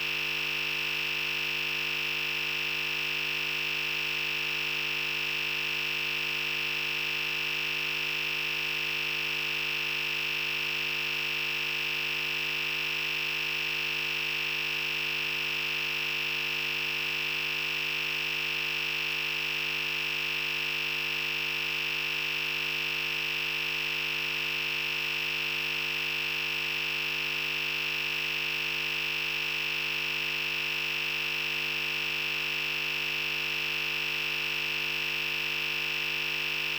electric field captured with ElectroSluch3